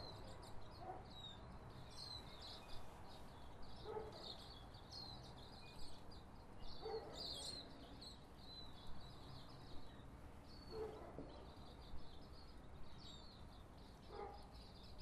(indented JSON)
{
  "title": "22 Adderley Terrace, Ravensbourne, DUNEDIN, New Zealand",
  "date": "2010-07-18 11:22:00",
  "description": "Bellbirds, wax-eyes & a suburban Sunday orchestra",
  "latitude": "-45.87",
  "longitude": "170.55",
  "altitude": "218",
  "timezone": "Pacific/Auckland"
}